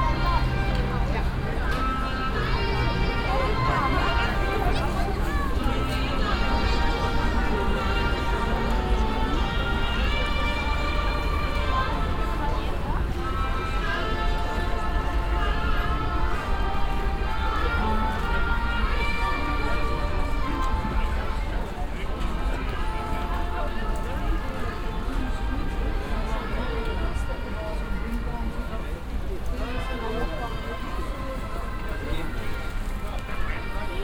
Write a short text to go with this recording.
weihnachtsmarkt ambience mit hängenbleibender loop beschallung. geräusche von ständen und zubereitungsautomaten, einem karussel und stimmen, nachmittags, soundmap nrw - weihnachts special - der ganz normale wahnsinn, social ambiences/ listen to the people - in & outdoor nearfield recordings